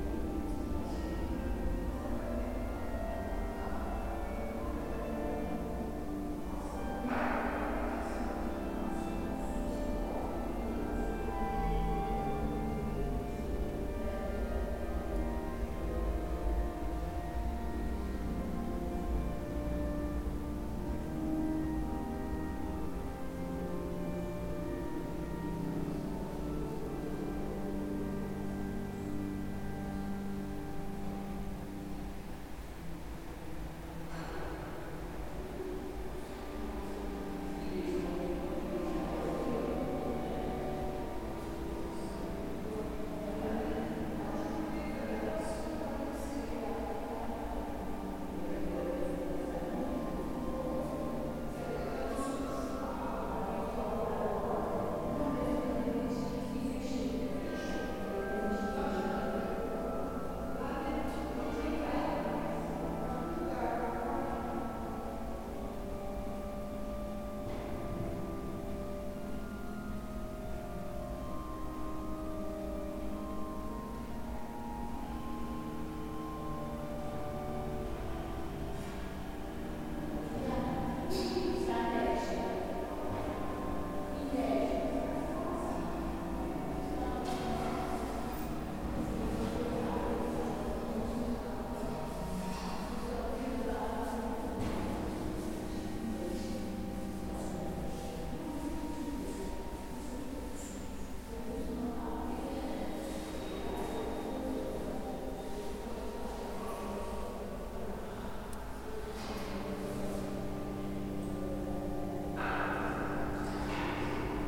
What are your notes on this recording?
An early morning meditation in the Église Notre-Dame du Rosaire, St Denis. I couldn't help but get distracted by the shifting intelligibility of voices moving in this vast, reverberant space (spaced pair of Sennheiser 8020s with SD MixPre6).